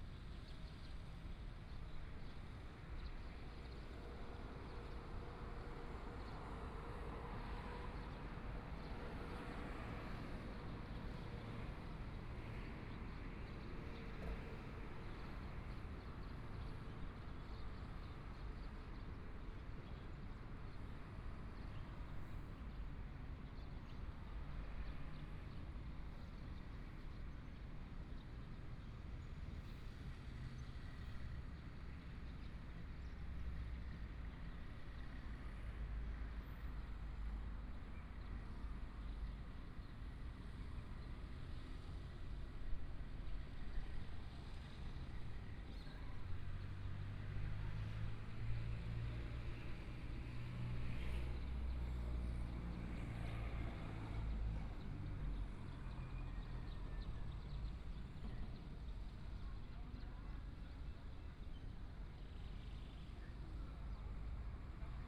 花蓮市, Taiwan - In the Square
In the Square, Birds singing, Traffic Sound
Binaural recordings
Zoom H4n+ Soundman OKM II